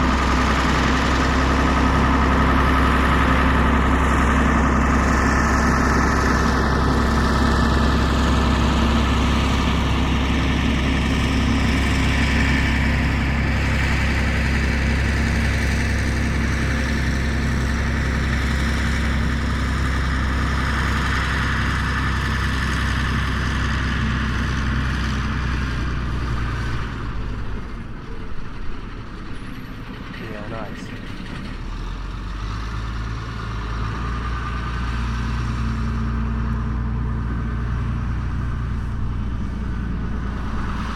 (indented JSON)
{
  "title": "machines mining sand, Torun Poland",
  "date": "2011-04-05 12:24:00",
  "description": "digging sand by the riverside",
  "latitude": "53.00",
  "longitude": "18.58",
  "altitude": "34",
  "timezone": "Europe/Warsaw"
}